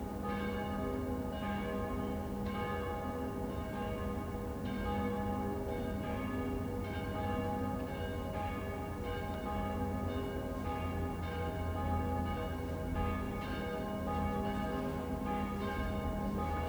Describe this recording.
A sunny blue sky Sunday morning. The first bells from my window after the defeat of Trump and the election of Biden in the US. The sound has an immediate significance of hope and relief, so different from normal. Let’s make it last!